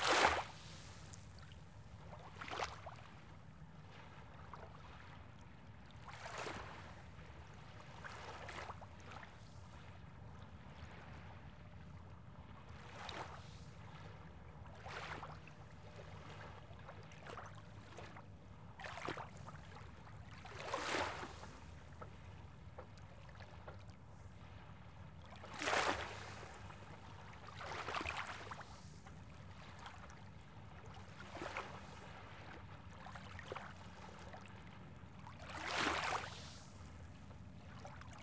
Southampton, NY, USA - Shoreline of the Bay
Recording of the beach shore at Elizabeth Morton Park.
Sag Harbor, NY, USA